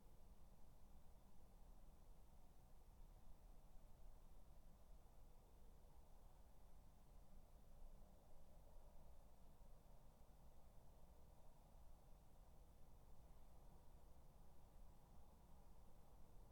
Dorridge, West Midlands, UK - Garden 20
3 minute recording of my back garden recorded on a Yamaha Pocketrak
Solihull, UK, 13 August